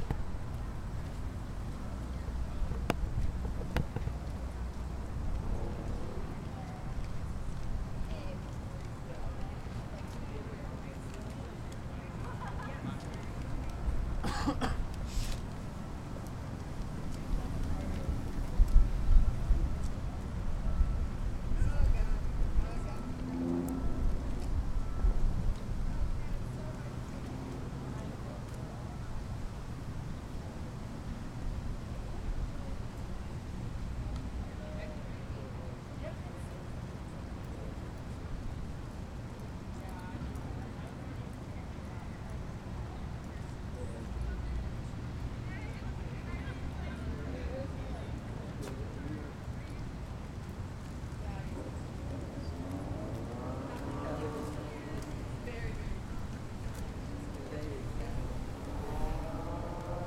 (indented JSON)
{
  "title": "Appalachian State University, Boone, NC, USA - Audio Documentary Sense of Place",
  "date": "2015-09-23 12:10:00",
  "description": "Sanford Mall sense of place recording",
  "latitude": "36.21",
  "longitude": "-81.68",
  "altitude": "984",
  "timezone": "America/New_York"
}